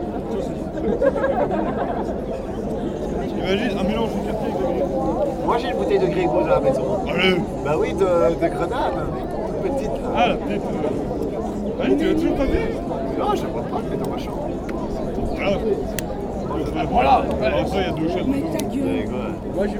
Ottignies-Louvain-la-Neuve, Belgique - Students evening break
After a long winter, it's the first real strong sunshine. I was curious to see the lake beach and make the detour. It was full of students taning, joking and drinking warm bad beers. This sound is an overview of the area.